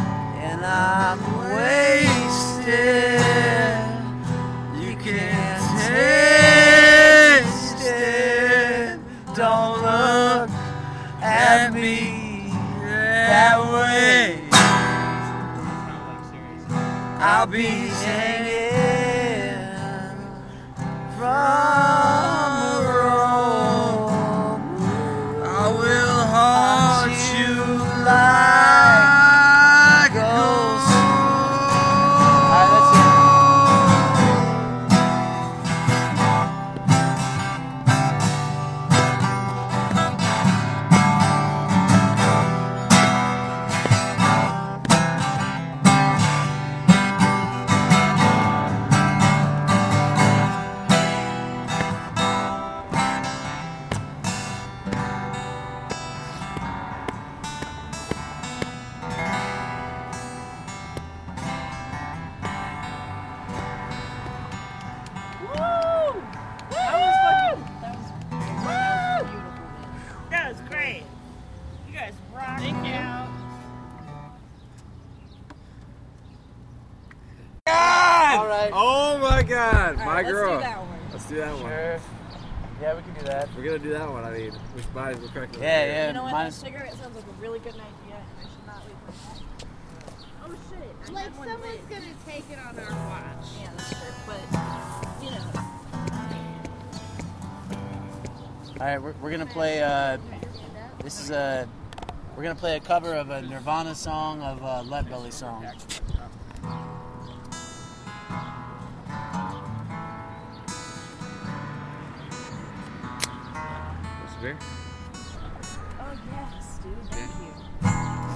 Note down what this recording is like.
A busker and friends busk and talk outside of Highland Square's Angel Falls on sunny afternoon in Akron. WARNING, the second song gets a bit loud in the middle.The sound was recorded using a Zoom Q3HD Handy Video Recorder and Flip mini tripod. The tripod was set on the ground.